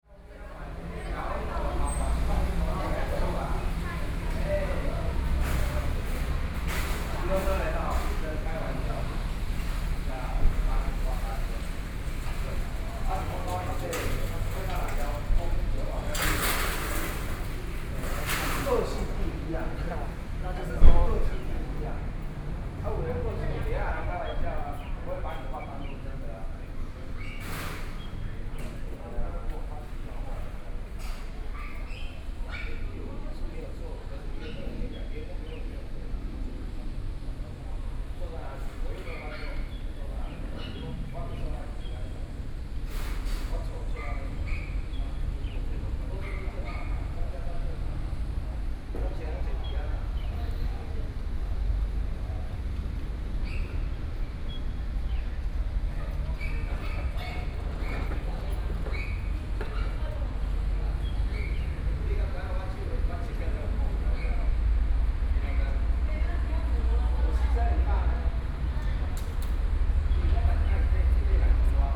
Outside the airport, Airports near ambient sound